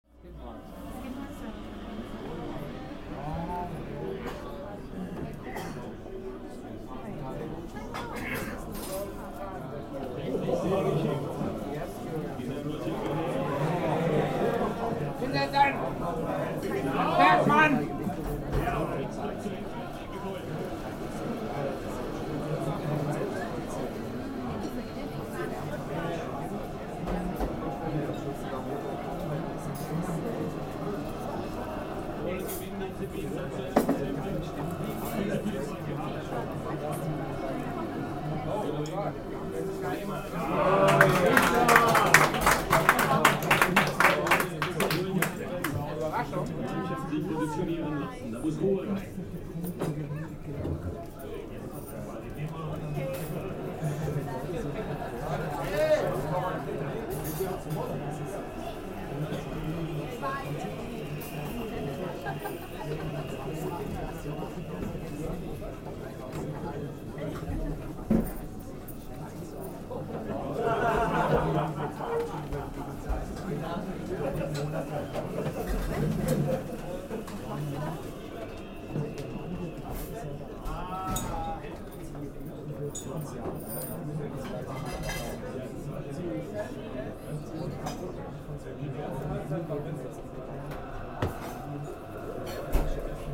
St. Gallen (CH), theatre canteen, soccer game
european soccer championship: germany vs. poland. recorded june 7, 2008. - project: "hasenbrot - a private sound diary"